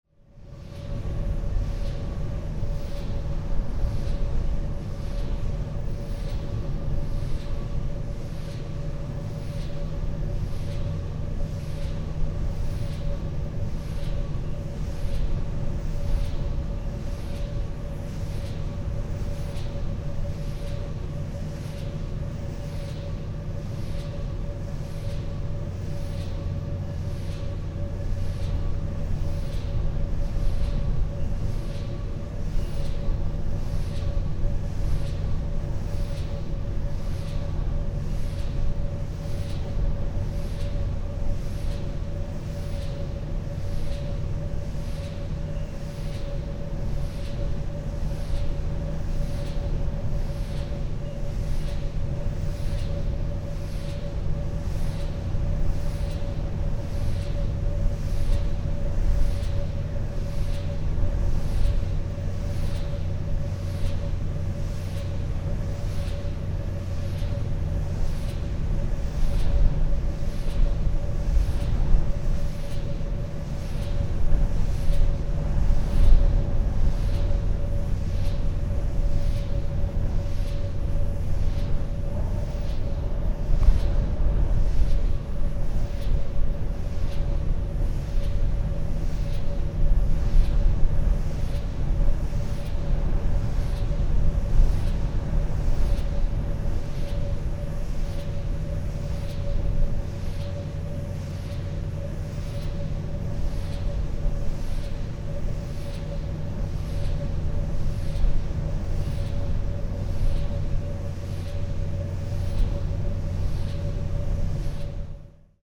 Aufnahme aus Distanz.
Mai 2003
Leros, Greece, May 2003